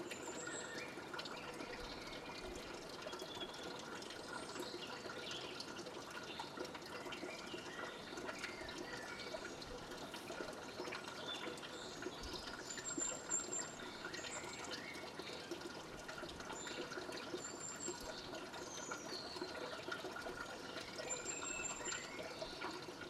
Collserola - Font Groga (Yellow Stream)